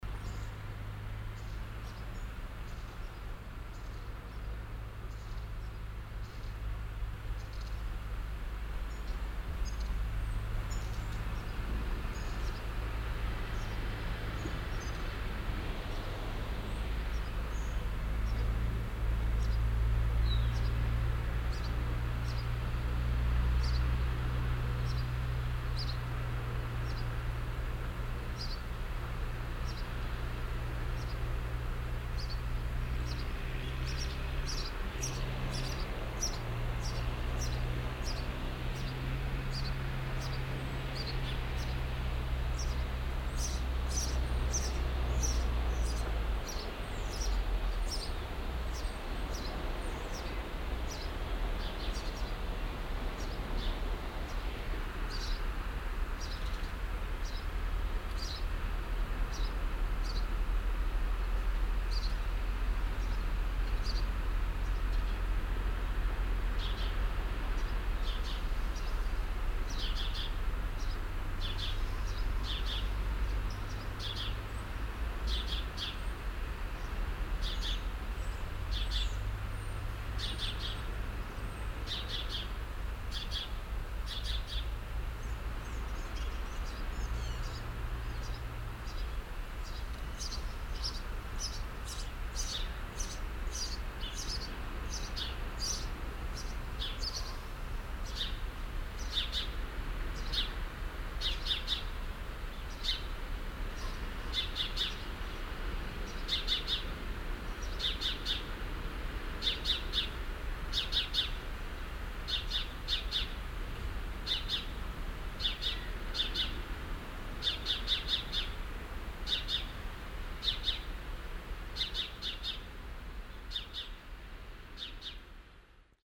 audresseles, hinterhof in meernähe
morgens, vögel in einem innenhof nahe dem meer
fieldrecordings international:
social ambiences, topographic fieldrecordings